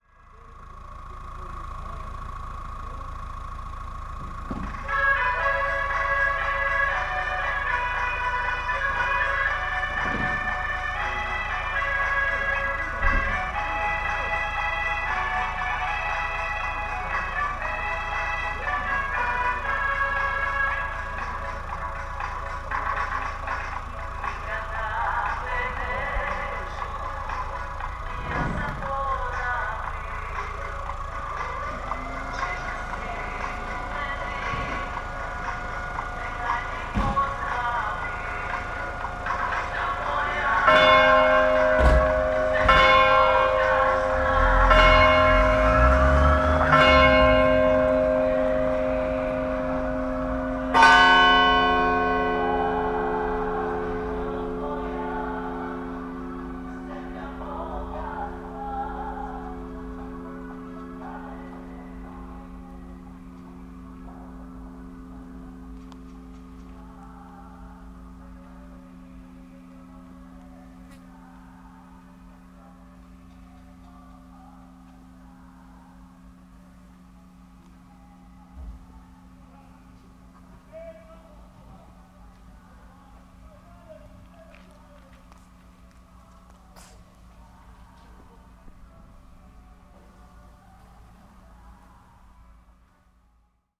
fish truck at 1:00, Draguc Istria
the fish truck comes to Draguc, a small Istrian hilltown